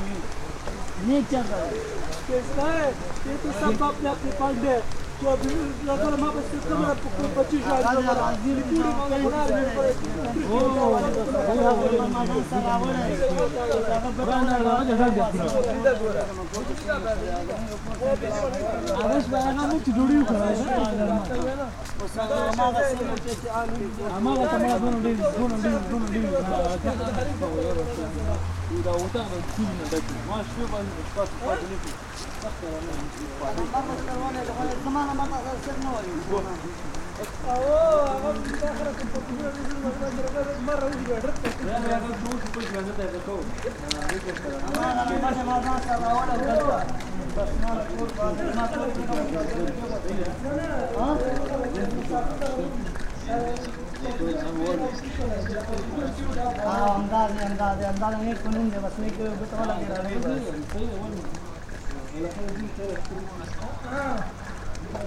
Thalgau, Austria - Walking with refugees I
A group of refugees during a walk from their camp to a church community for an afternoon coffee. This is a regular activity initiated by local volunteers when the first refugees arrived to Thalgau in summer 2015. At the beginning it was mainly Syrians, most of whom meanwhile got asylum and moved to other places, mainly Vienna. The ones remaining are mostly men from Afghanistan and Iraq, who recently got joined by a group from Northern Africa. According to Austria’s current asylum policy they barely have a chance to receive asylum, nevertheless the decision procedure including several interviews often takes more than a year. If they are lucky, though, they might receive subsidiary protection. Despite their everyday being dertermined by uncertainty concerning their future, they try to keep hope alive also for their families often waiting far away to join them some day.
During the last year, the image of refugees walking at the roadside became sort of a commonplace in Austria.